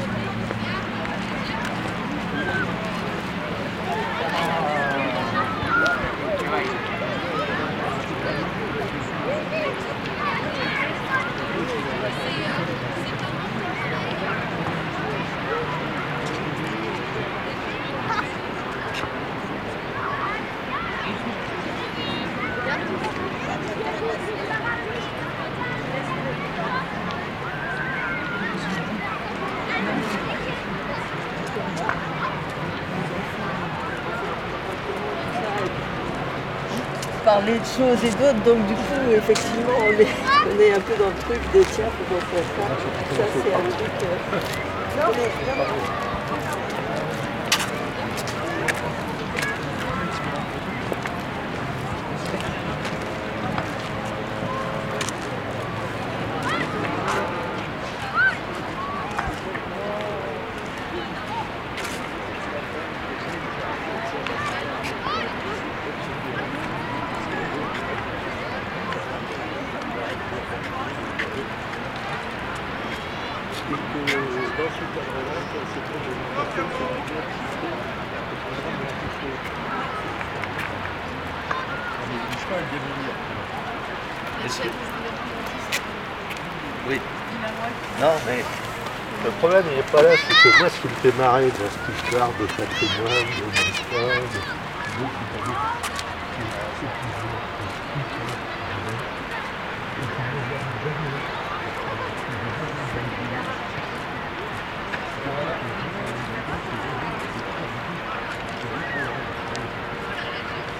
In front of the sea, people on the beach, Villers-sur-mer, Normandy, France, Zoom H6